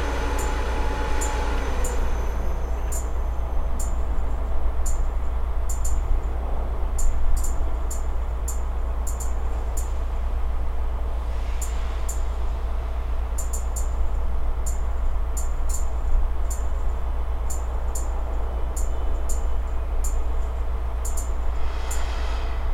Stotis, Vilnius, Lithuania - Vilnius train station platform
Vilnius train station platform sounds; recorded with ZOOM H5.